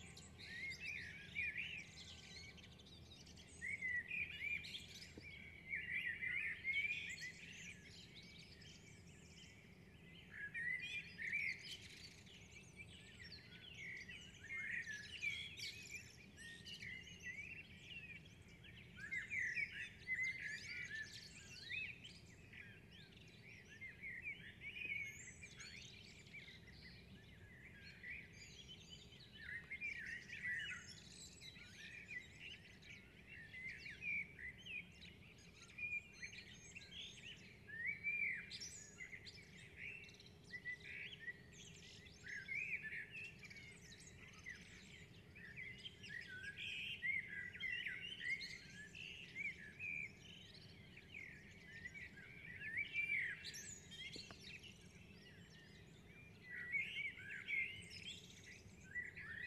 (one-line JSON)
{"title": "Plasy, Czech Republic - early morning ambience of mid-June on Lesni street", "date": "2013-06-14 05:15:00", "latitude": "49.93", "longitude": "13.37", "altitude": "372", "timezone": "Europe/Prague"}